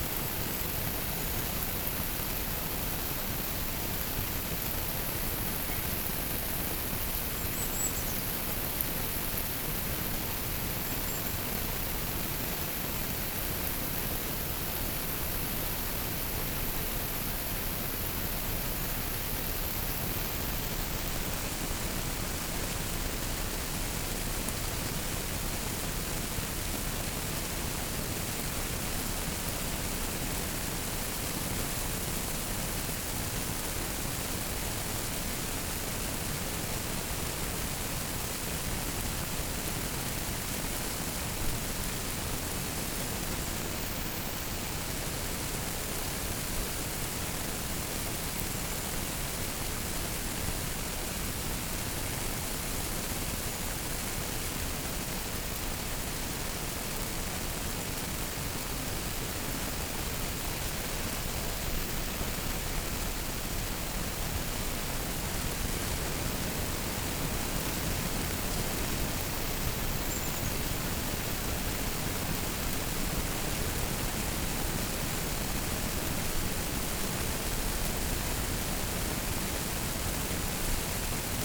water spraying out of a nozzle of a hose sprinkler. interesting combination of different water sound. water dripping from the hose, heavy drops hitting the ground to the left and noise of the spray on the right. (roland r-07)